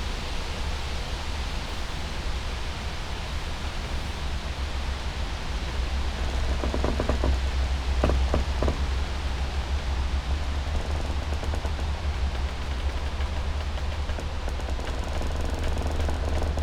tiny area between old river bed and the canal, with still water in the middle, encased with old poplar trees